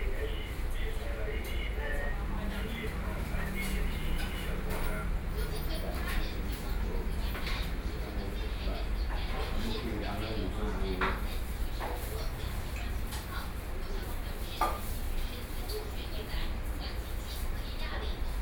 Beitou, Taipei - In the restaurant
In the restaurant, Sony PCM D50 + Soundman OKM II